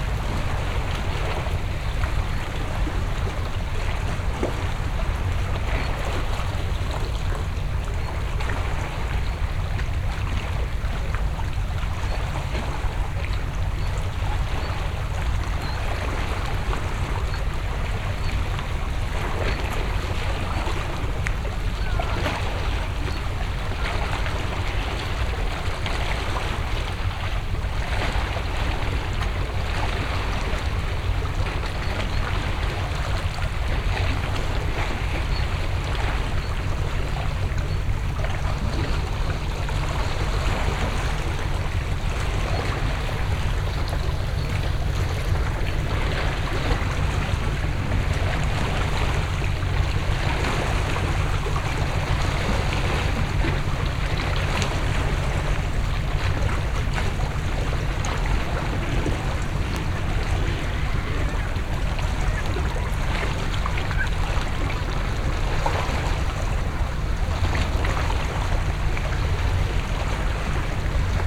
seaside sounds by the abandoned sanatorium, Heybeliada
ambiance at the seaside by an abandoned sanatorium